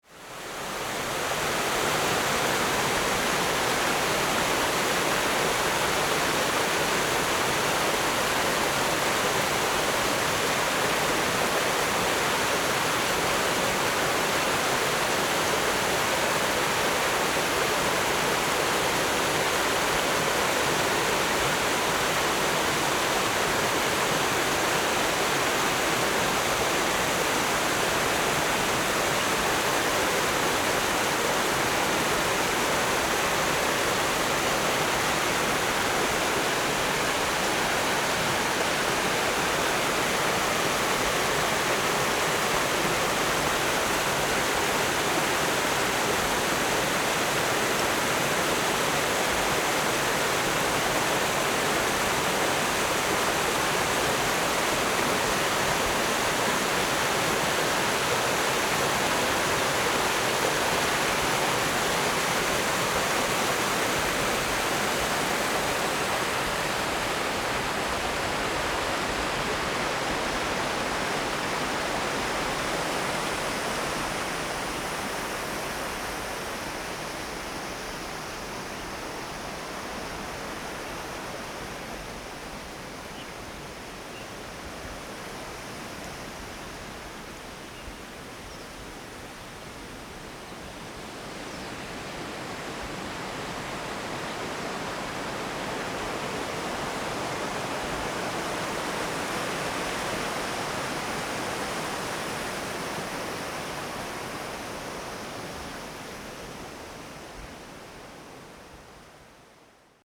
Next to small power plants, Stream
Zoom H4n + Rode NT4